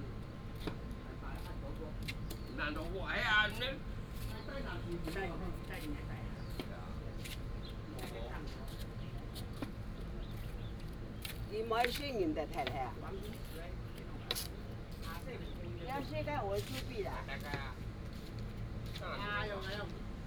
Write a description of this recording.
traffic sound, Bird call, In the river park, Hakka people, Factory noise